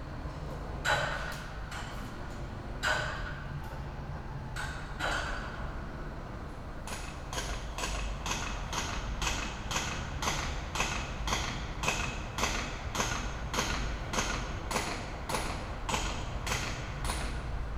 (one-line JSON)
{"title": "Berlin Bürknerstr., backyard window - renovation", "date": "2013-10-07 10:20:00", "description": "scaffolders and renovation works in my backyard. this neighbourhood Kiez is developing, so these sounds can be heard all over.\n(Sony PCM D50, internal mics)", "latitude": "52.49", "longitude": "13.42", "altitude": "45", "timezone": "Europe/Berlin"}